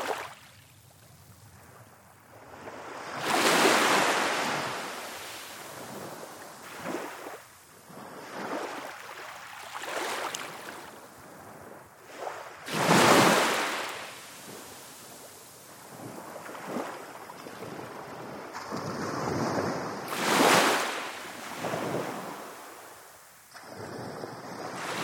Newton-by-the-Sea, Northumberland, UK - Sea Recording at Football Hole
Stereo MS recording of the incoming tide at Football Hole in Northumberland